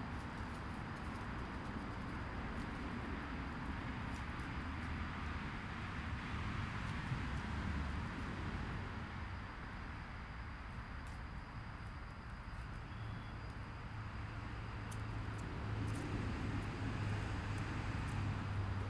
This was recorded by Washington's crossing on the Delaware river.
Titusville, NJ, USA, 16 October 2013